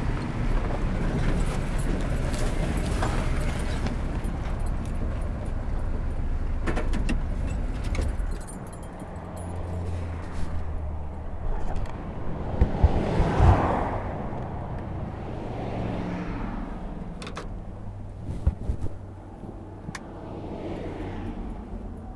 here comes the train! what luck! we're very close, it gets, very loud...headphone wearers! proposal to turn down the volume! then we drive thirty five feet to where we were headed and do what we planned on doing. eka sneezing at 5:08..... much freeway traffic noises ...post malone...selah.